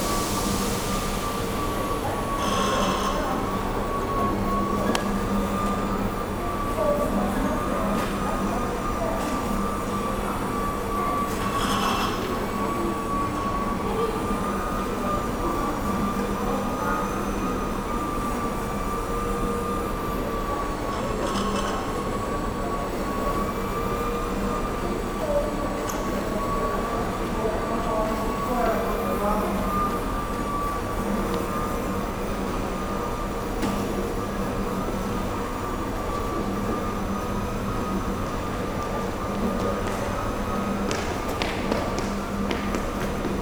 Japonia, Chiba-ken, Narita-shi, 成田国際空港 Terminal - floor polishing
cleaning crew is washing and polishing the floor in the terminal. (roland r-07)
6 October 2018, 23:15